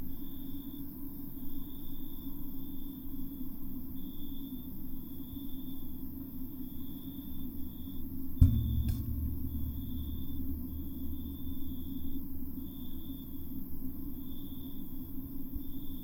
Orvieto (Italy), country house. Cicadas, crickets, wind through ceramic flowerpot.
Cicadas, crickets, wind filtered trough a found empty ceramic flowerpot.
26 August 2010, 21:20